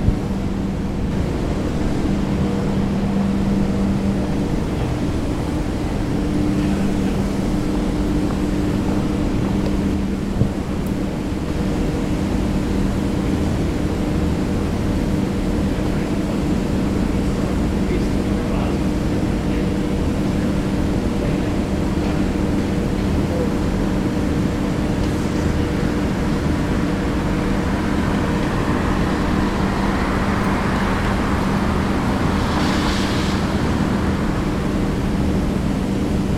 University of Colorado Boulder, Regent Drive, Boulder, CO, USA - CU Boulder at night
Standing at the Dock on a rainy night
14 February 2013, ~05:00